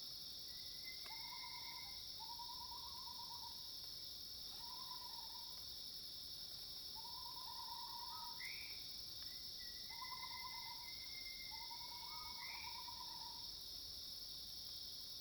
華龍巷, 南投縣魚池鄉, Taiwan - Insects sounds
Insects called, Birds call, Cicadas cries, Facing the woods
Zoom H2n MS+XY
Puli Township, 華龍巷164號, 19 September